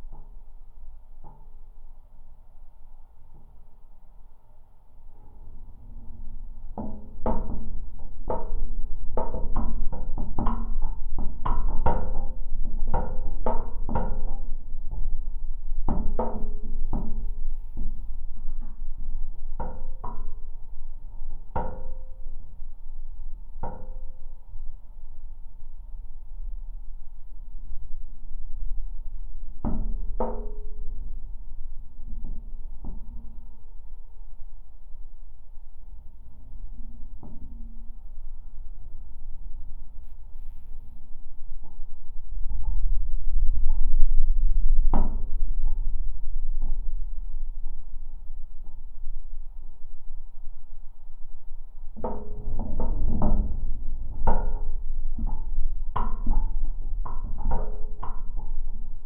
Sudeikiai, Lithuania, lamp pole
Windy day. High metallic lamp pole. Geophone recording
Utenos apskritis, Lietuva, March 2021